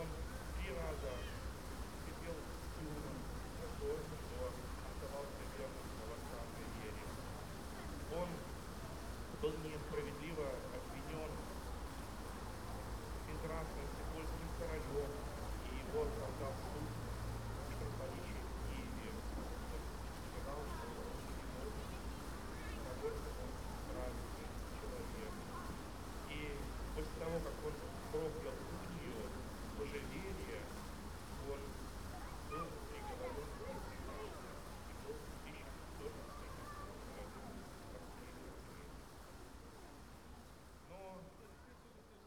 Vierchni Horad, Minsk, Belarus, at fountain
The Place of Freedom, morning mass in the church and working fountaim